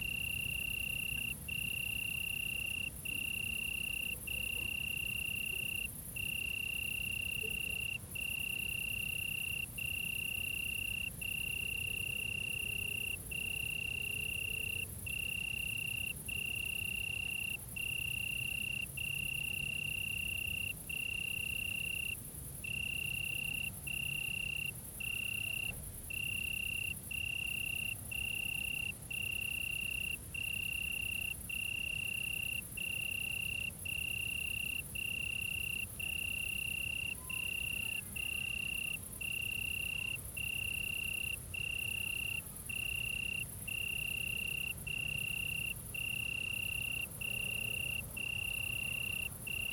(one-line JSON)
{"title": "Solesmeser Str., Bad Berka, Deutschland - Suburban Germany: Crickets of Summer Nights 2022-No.1", "date": "2022-08-19 23:59:00", "description": "Documenting acoustic phenomena of summer nights in Germany in the year 2022.\n*Binaural. Headphones recommended for spatial immersion.", "latitude": "50.90", "longitude": "11.29", "altitude": "289", "timezone": "Europe/Berlin"}